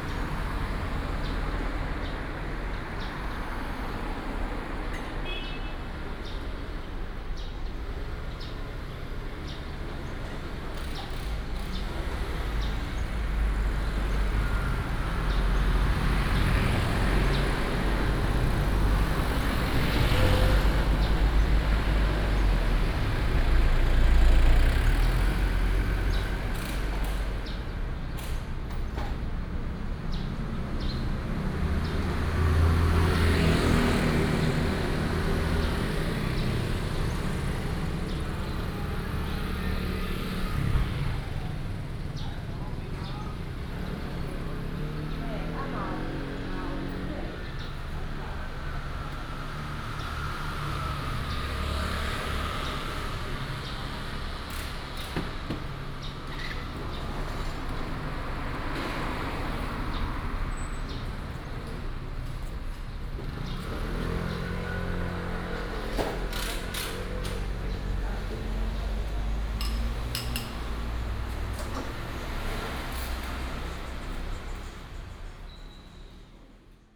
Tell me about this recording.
Small street, Bird sounds, Traffic Sound